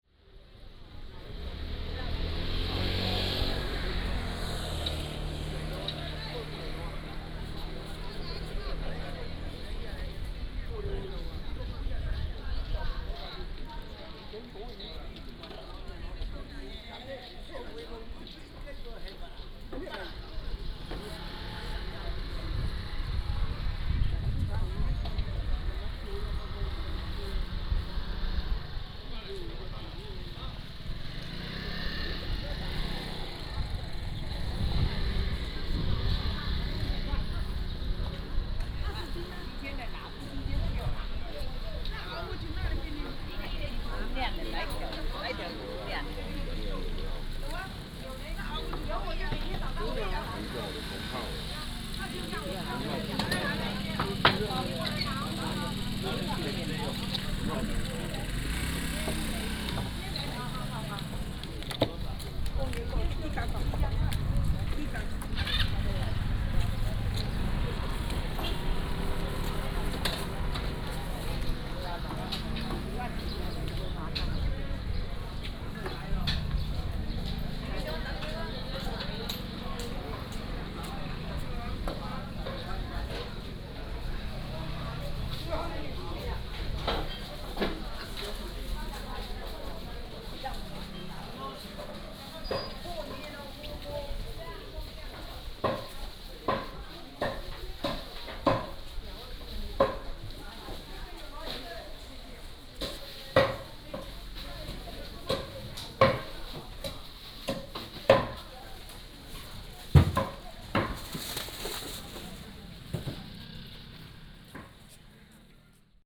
介壽獅子市場, Nangan Township - Walking through the market
Walking through the market, Traffic Sound